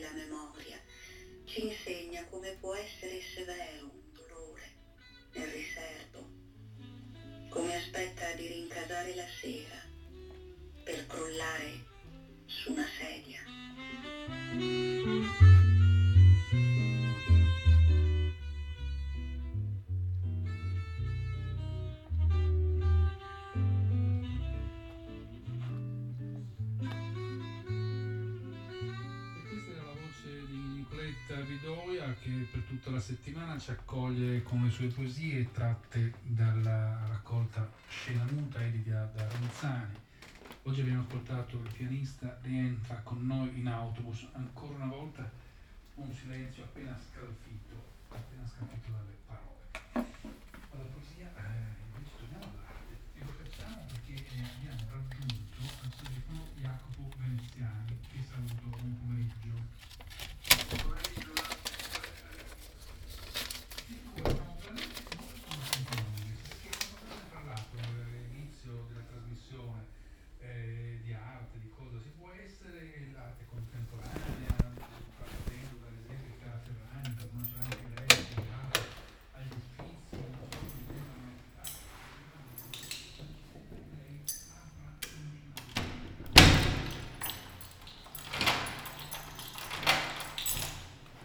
"It’s five o’clock on Wednesday with bells and post-carding in the time of COVID19" Soundwalk
Chapter CXIX of Ascolto il tuo cuore, città. I listen to your heart, city
Wednesday, July 22th 2020. San Salvario district Turin, walking to Corso Vittorio Emanuele II and back, four months and twelve days after the first soundwalk during the night of closure by the law of all the public places due to the epidemic of COVID19.
Start at 4:52 p.m. end at 5 :19 p.m. duration of recording 29’13”
As binaural recording is suggested headphones listening.
The entire path is associated with a synchronized GPS track recorded in the (kmz, kml, gpx) files downloadable here:
Go to similar Chapters n. 35, 45, 90, 118
Piemonte, Italia, 22 July 2020